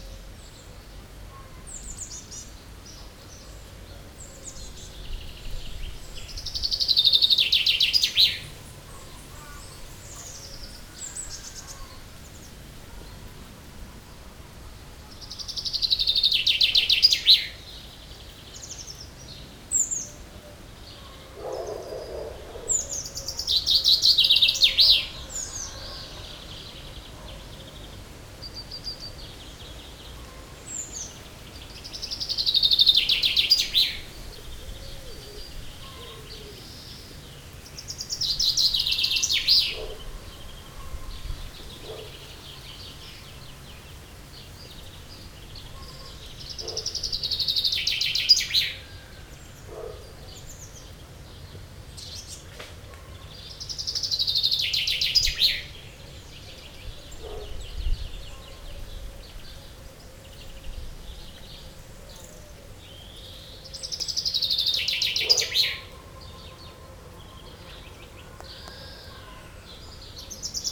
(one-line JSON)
{"title": "Saint-Martin-de-Nigelles, France - Chaffinch singing", "date": "2018-07-18 11:00:00", "description": "A friendly chaffinch singing into a lime-tree. With this repetitive song, the bird is marking its territory.", "latitude": "48.61", "longitude": "1.61", "altitude": "103", "timezone": "Europe/Paris"}